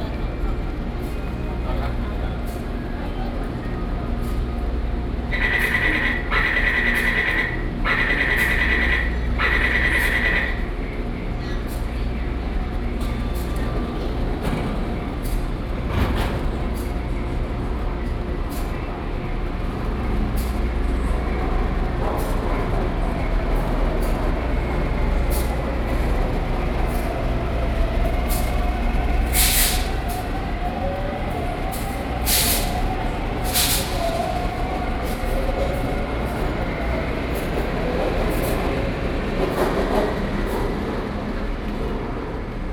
{"title": "Taipei main Station, Taipei City - SoundMap20121127-2", "date": "2012-11-27 12:31:00", "latitude": "25.05", "longitude": "121.52", "altitude": "12", "timezone": "Asia/Taipei"}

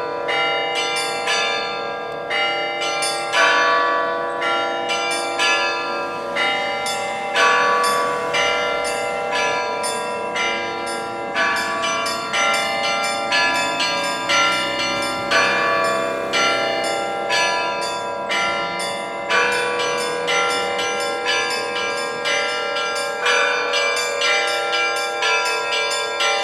{"title": "ул. Короленко, Нижний Новгород, Нижегородская обл., Россия - church bells", "date": "2022-07-22 15:51:00", "description": "sound recorded by members of the animation noise laboratory by zoom h4n", "latitude": "56.31", "longitude": "44.00", "altitude": "177", "timezone": "Europe/Moscow"}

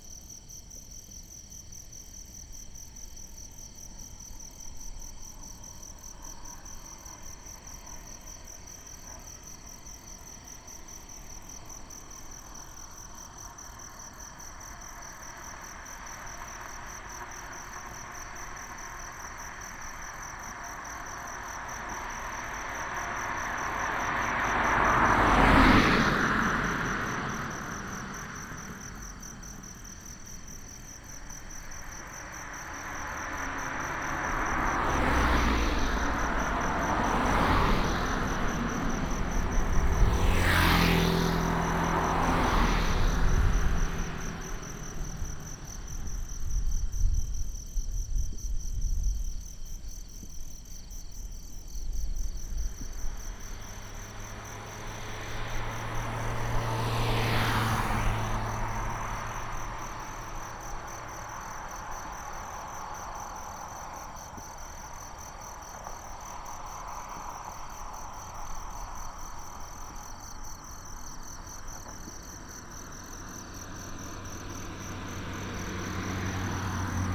{"title": "中75鄉道, Shalu Dist., Taichung City - Insects and Traffic sound", "date": "2017-10-09 20:03:00", "description": "Next to the farm, Insects sound, Traffic sound, CFirecrackers and fireworks, Binaural recordings, Sony PCM D100+ Soundman OKM II", "latitude": "24.24", "longitude": "120.60", "altitude": "264", "timezone": "Asia/Taipei"}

